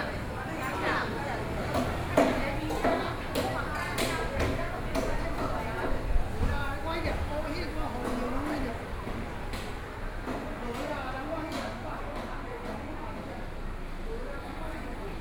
新興區建興里, Kaohsiung City - Traditional Market
Walking through the traditional market, Traffic Sound